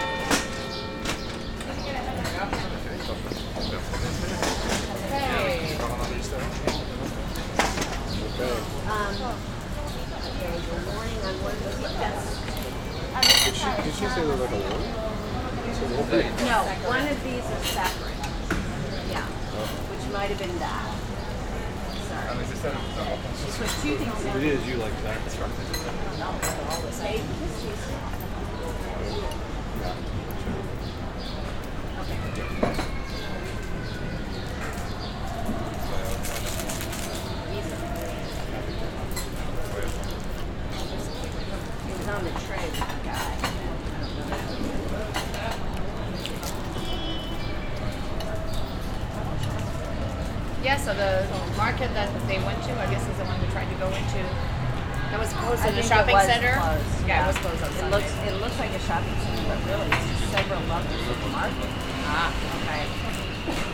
Del Buen Pastor Plaza, Donostia, Gipuzkoa, Espagne - Nuestro Café
coffee on the square
Captation : ZOOM H6
27 May 2022, 12:00